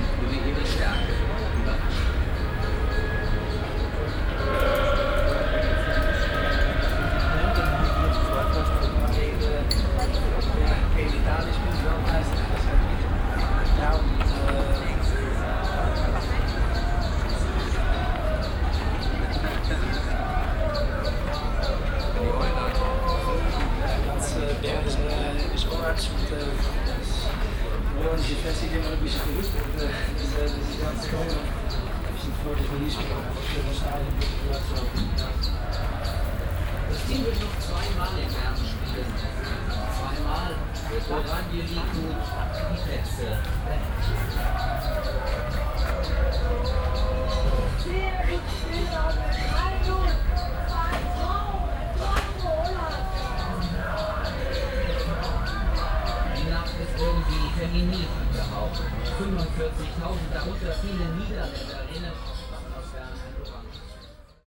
cologne, stadtgarten, biergarten - koeln, stadtgarten, biergarten, public viewing
stadtgarten biergarten, nachmittags - public viewing zur em 2008
projekt klang raum garten - soundmap stadtgarten
rsetaurantbetrieb, biergarten